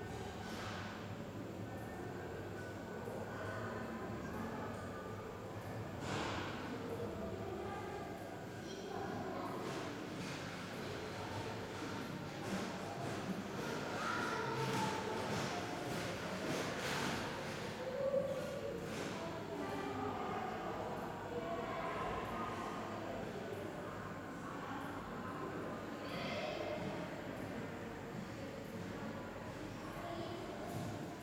Berlin, Urbanstr., Nachbarschaftshaus - stairway area
Nachbarschaftsshaus, entry hall, stairway, ambience